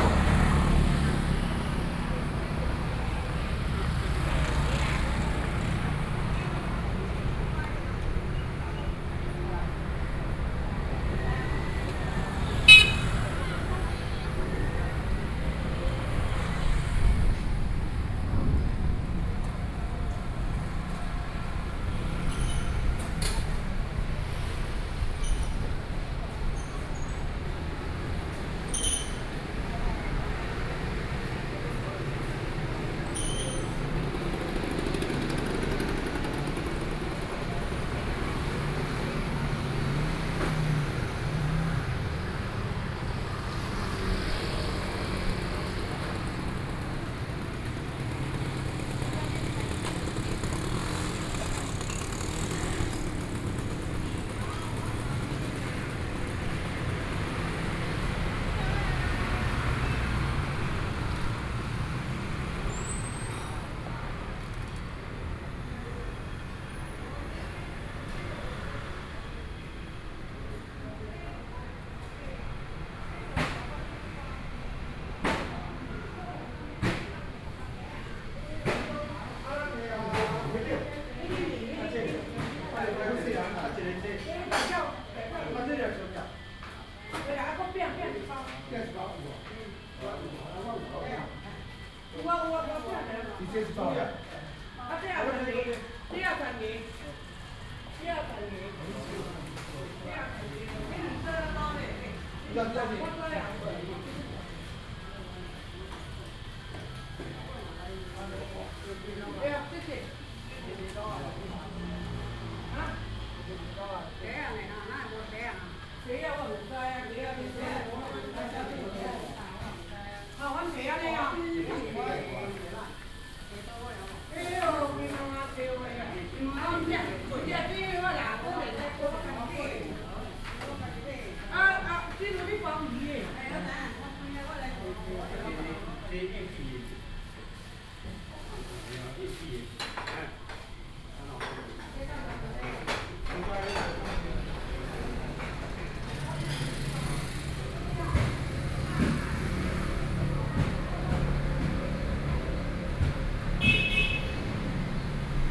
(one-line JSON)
{"title": "Gongyuan Rd., 羅東鎮集祥里 - walking on the Road", "date": "2014-07-27 10:53:00", "description": "walking on the Road, Traffic Sound\nSony PCM D50+ Soundman OKM II", "latitude": "24.68", "longitude": "121.77", "altitude": "15", "timezone": "Asia/Taipei"}